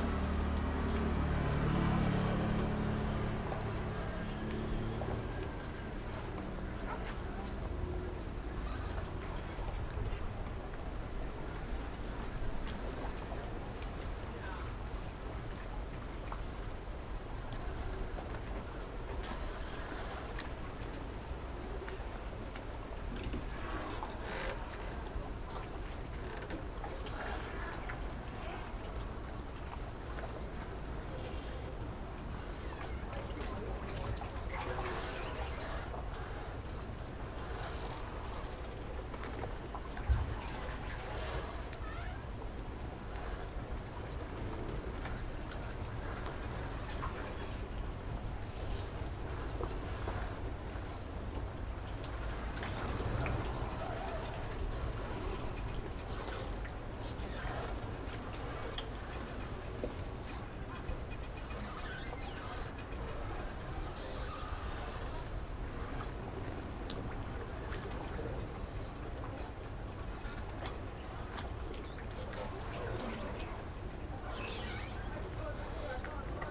風櫃西港漁港, Penghu County - In the fishing port pier
Small fishing port, Small fishing village
Zoom H6 +Rode NT4
23 October, ~15:00